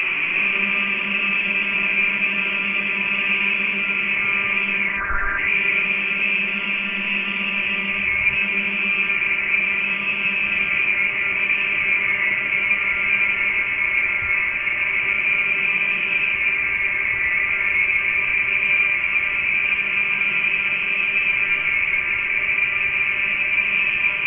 wind enery plant, Candal, Portugal, tower - windenergyTowerHydro
Hydrophone pressed on the tower of the machine.
Be careful with volume! The machine starts after 45 seconds!
19 July 2012